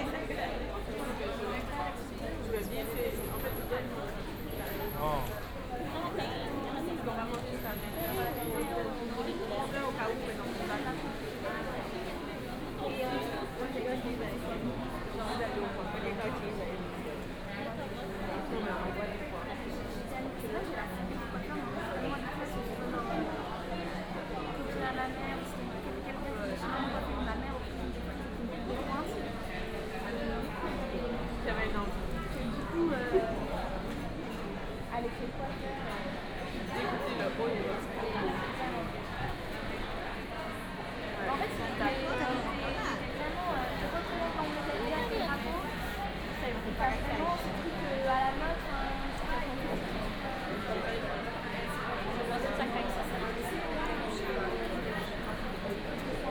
Covered Markets, Oxford - Ben's Cookies stand
waiting in the queue for Ben's cookies
(Sony D50, OKM2)